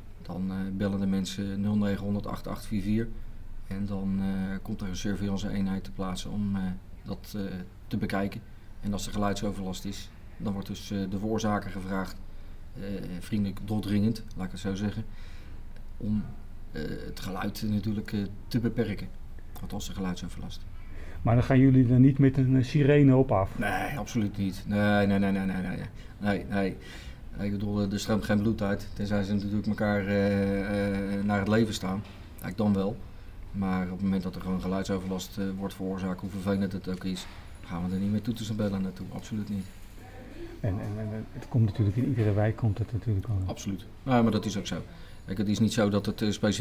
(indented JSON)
{
  "title": "Wijkagent Arthur is gespitst op geluiden",
  "date": "2011-09-09 15:30:00",
  "description": "Wijkagent Arthur vertelt over de geluiden van de Stevenshof en hoe hij luister als agent",
  "latitude": "52.15",
  "longitude": "4.45",
  "altitude": "1",
  "timezone": "Europe/Amsterdam"
}